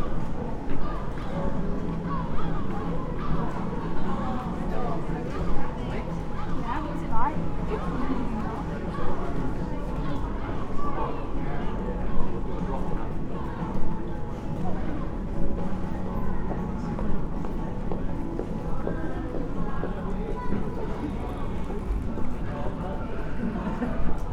A long post Covid walk around the centre of Worcester starting in a shopping precinct then out onto the streets, in and out of the cathedral, back along High Street and outside a cafe for lunch. We hear snatches of conversation and a street musician on a sunny day. The audio image changes constantly as I slowly wander around between pauses. All the recording equipment, a MixPre 6 II with 2 Sennheiser MKH 8020s, is carried in a small rucksack.